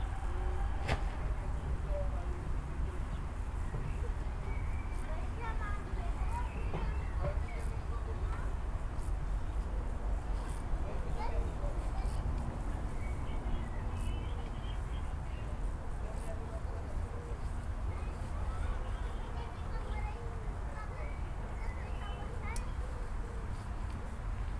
allotment, Mergenthalerring, Neukölln, Berlin - informal living situation
the overgrown gardens alongside S-Bahn / mobile concrete factory are abandoned since a few years, due to the construction of the A100 motorway, which most probably starts soon. however, there seem to live families in the shacks, a woman is washing cloth, children are playing in the green. i could not identify their language.
(Sony PCM D50, DPA4060)